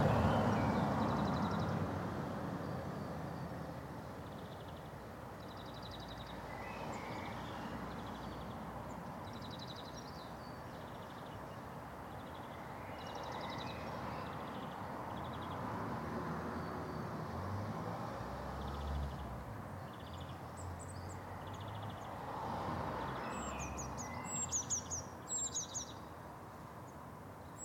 Morgan Road, Reading, UK - The birds singing between the growls of the cars
As I was walking up Kendrick Road of a fine spring evening, I noticed the air was thick with lovely birdsong so I stopped to listen. There is a wide road to the right of where I'm stood here, through which you can hear individual cars and lorries passing sporadically, with pauses in between where the resident birds can be heard singing out their wee hearts. Along with the occasional police siren. Recorded with my trusty Edirol R-09.